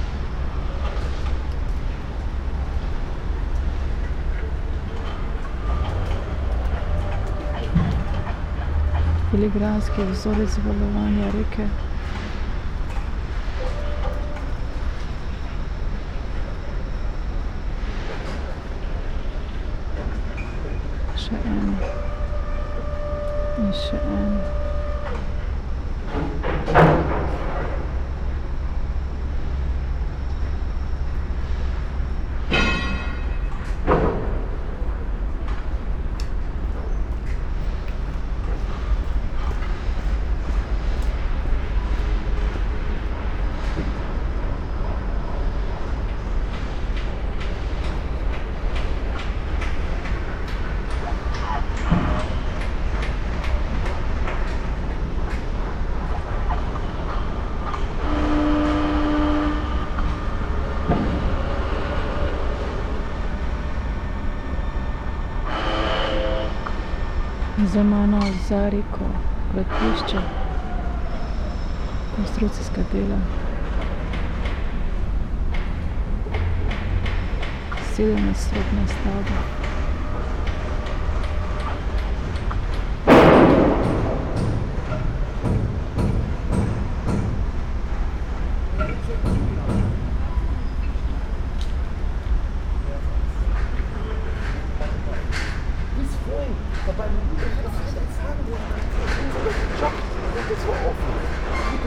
spoken words, construction works, river Spree in dark blue brown with few unhealthy pale gray foam islands floating slowly, along with fallen dry leaves of early autumn ...
Sonopoetic paths Berlin
3 September 2015, 14:54